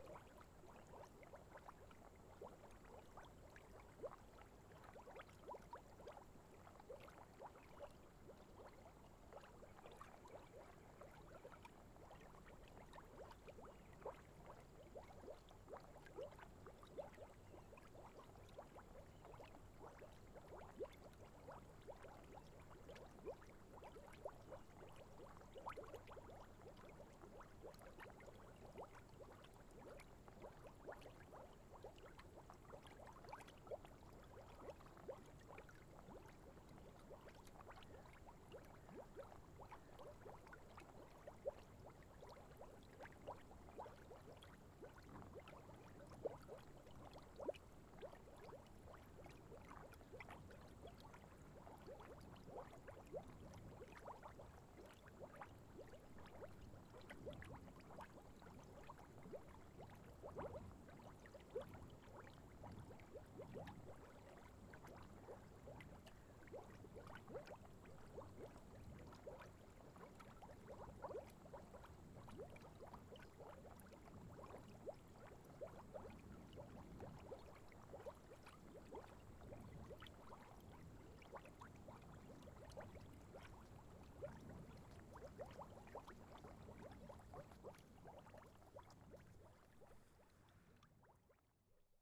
Caldara di Manziana - Small mud pools
A little puddle with boiling mud. Some crickets and bird chirps in the distance, along with other animals calls fro the near wood.
The audio has been cropped to eliminate plane's noises from the near airport.
No other modifications has been done.
TASCAM DR100 MKII
Manziana RM, Italy